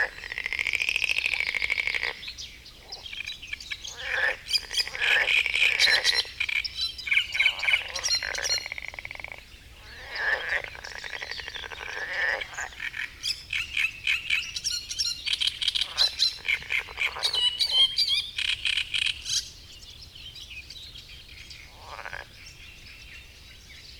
Gmina Tykocin, Poland - great reed warbler and marsh frogs soundscape ...
Kiermusy ... great reed warbler singing ... frog chorus ... sort of ... pond in hotel grounds ... open lavalier mics either side of a furry tennis bat used as a baffle ... warm sunny early morning ...
Kiermusy, Poland